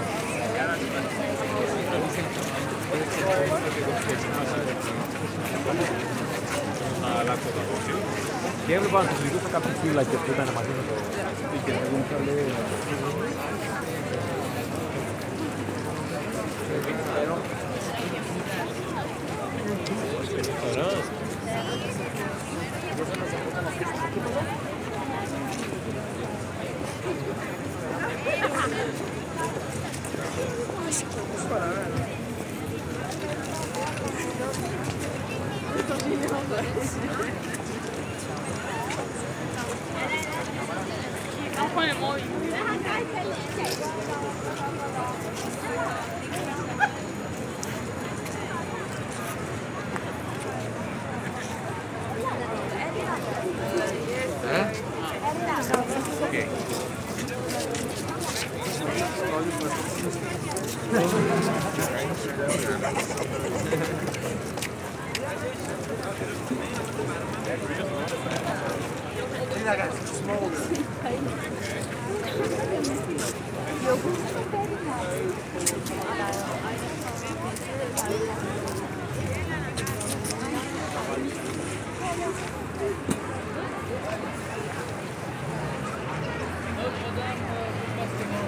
Paris, France - Tourists in front of the cathedral
A lot of tourists waiting in front of the Notre-Dame cathedral, some people giving food to the doves, a few people joking.
2 January 2019